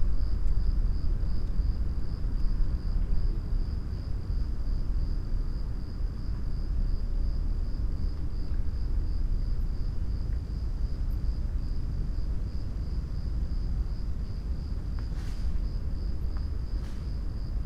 early september night sounds in old Trieste free port, crickets, bats ...
Trieste, Italy, 9 September 2013, 23:50